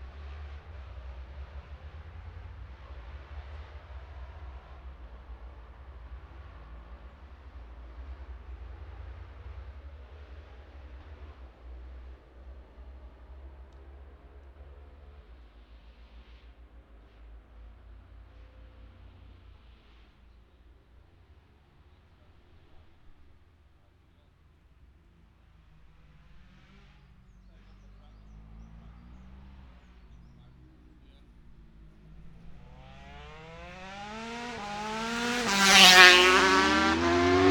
Scarborough, UK - motorcycle road racing 2012 ...
600-650cc twins practice ... Ian Watson Spring Cup ... Olivers Mount ... Scarborough ... binaural dummy head ... grey breezy day ...